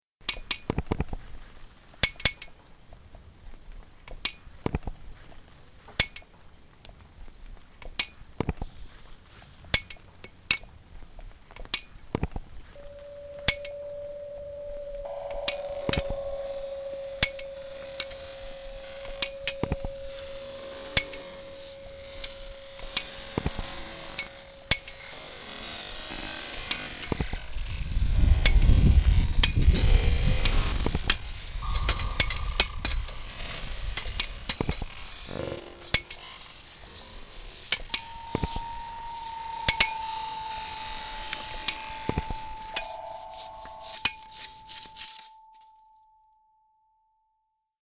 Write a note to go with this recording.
To create a garden for listening and international cooperation which appeals to all of the senses - this is the aim of Datscha-Radio. For 7 days, 24 hours, from 24th to 31st of August, we will be broadcasting directly from a typical Berlin garden patch. Rain or shine!